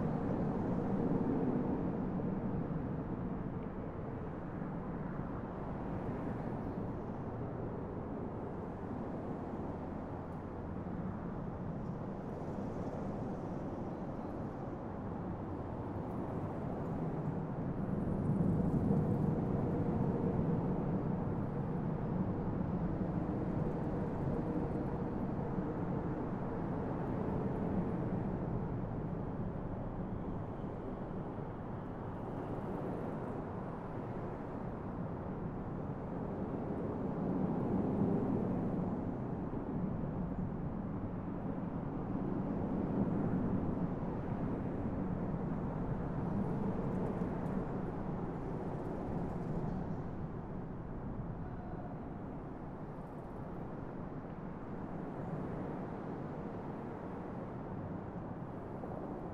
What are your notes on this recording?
Rio Grande Interstate 40 Underpass accessed via Gabaldon Place. Recorded on Tascam DR-100MKII; Fade in/out 30 seconds Audacity, all other sound unedited.